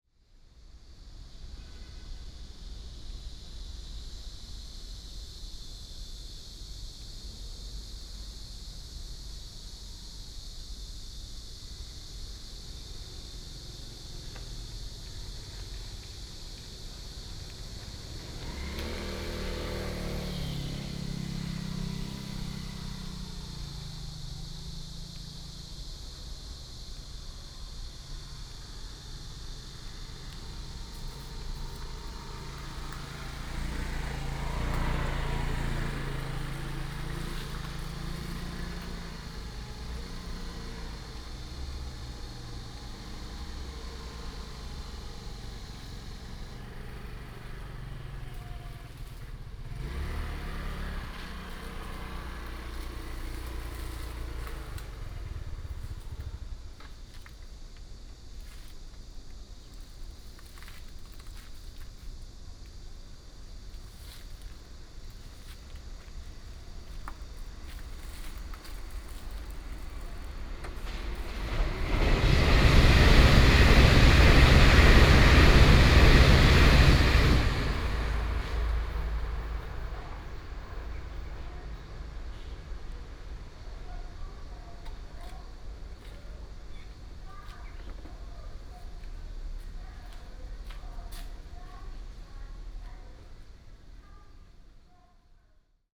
Dachang Rd., Pingzhen Dist. - train runs through
train runs through, Cicadas, Traffic sound